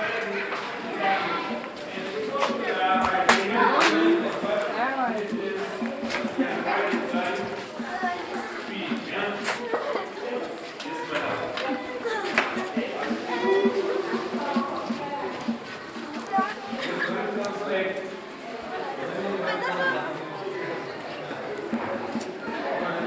Siachilaba Primary School, Binga, Zimbabwe - in between lessons...
… walking in between the class rooms of Siachilaba Primary School in Binga
7 November 2012